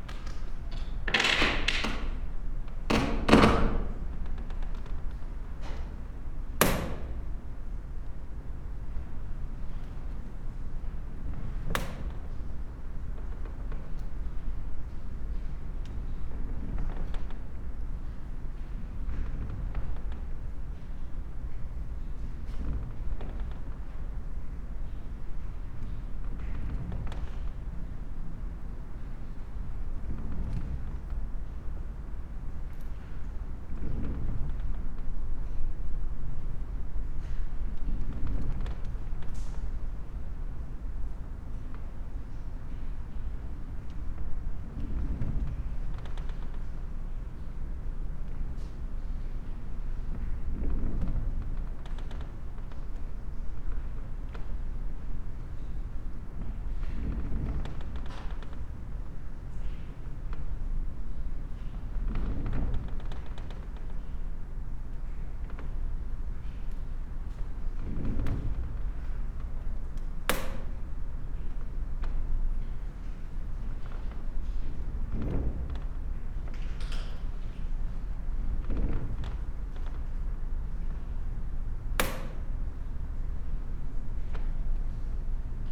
Punto Franco Nord, house, Trieste, Italy - doors
massive wooden door squeak, on the ground floor of abandoned house number 25, old harbor ambience, drops ...